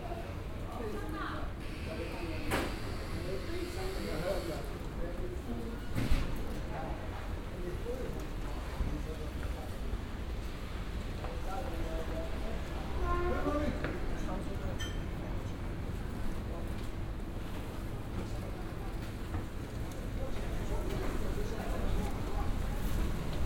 paris, rue au maire
narrow road with cobbled pavement and all chinese shops. a transport waggon passes by and stops as it loads nearly falls down
international cityscapes - sociale ambiences and topographic field recordings